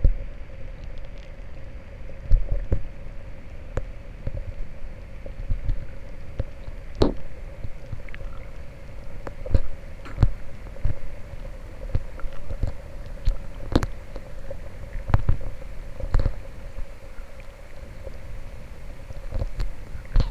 막걸리 만들기 과정 (시작 96시 후에) Rice wine fermentation (4th day) - 막걸리 만들기 과정 (시작 96시 후에)Rice wine fermentation (4th day)
막걸리 만들기 과정_(시작 96시 후에) Rice wine fermentation (4th day)